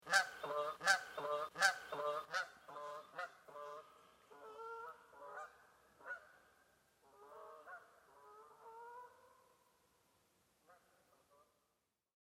flying above my head.
stafsäter recordings.
recorded july, 2008.